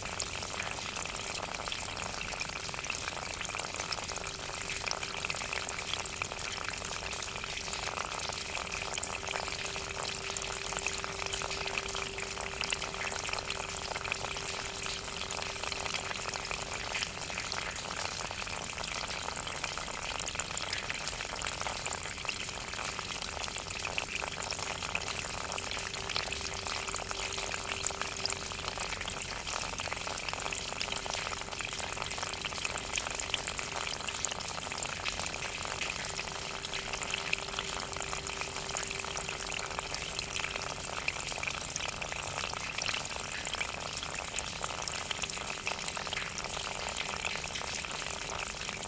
La Rochelle, France - Its raining
A constant rain is falling on La Rochelle this morning. Water is flowing out inside a gutter.